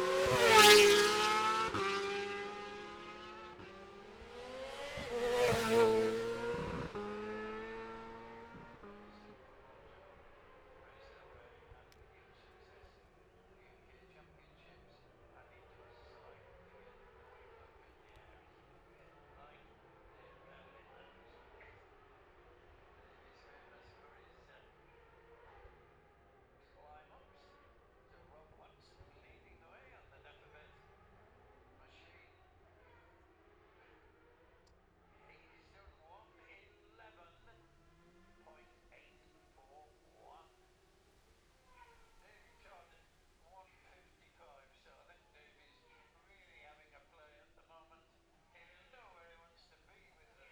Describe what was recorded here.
the steve henshaw gold cup 2022 ... 600 group two practice ... dpa 4060s on t-bar on tripod to zoom f6 ...